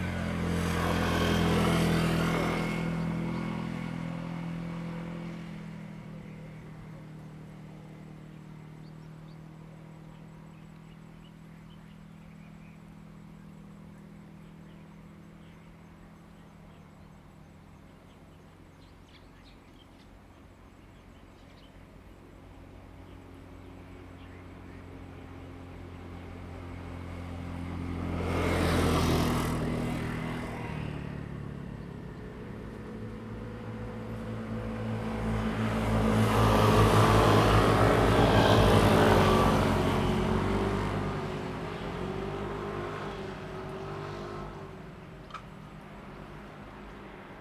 Various bird calls, Agricultural areas in mountain villages, traffic sound
Zoom H2n MS+XY